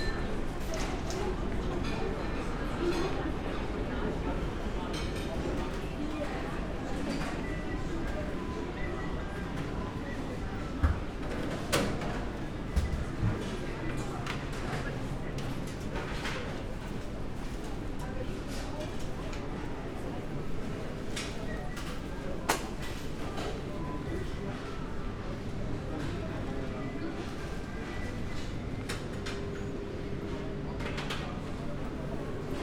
binaural soundwalk through the main station
the city, the country & me: may 12, 2014